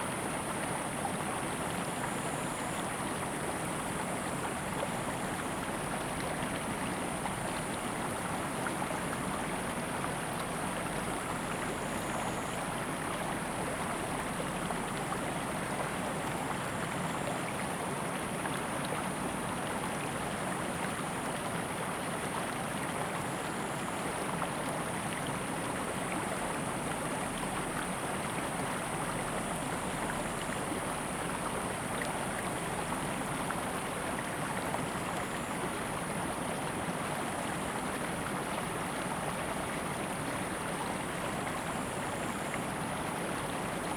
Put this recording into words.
Streams of sound, Very hot weather, Zoom H2n MS+XY